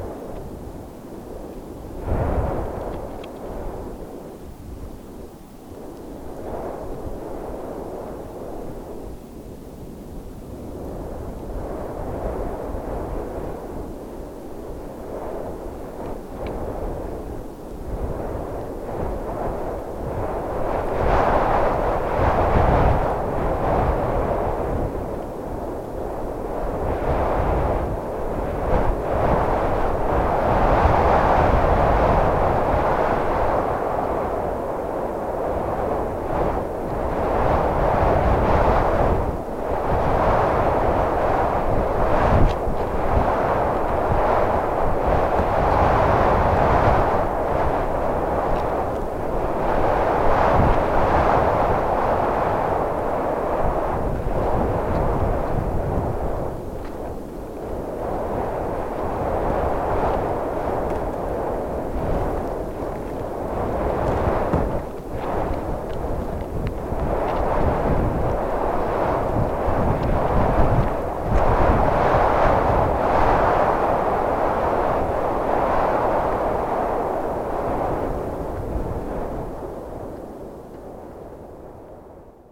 Meyrueis, France - Wind in fir
An isolated fir is suffering in a strong wind.
30 April 2016